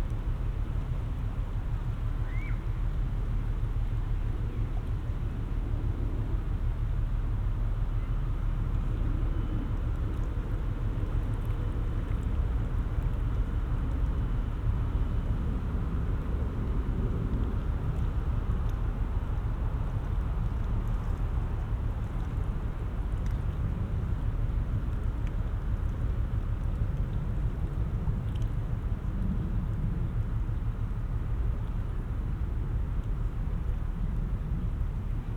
Rheinpromenade, Mannheim, Deutschland - Frachtschiff rheinaufwärts
Fluss Rhein, Wind, Wasser, Wellen, Frachtschiff Wolfgang Krieger, Vögel, urbaner Hintergrund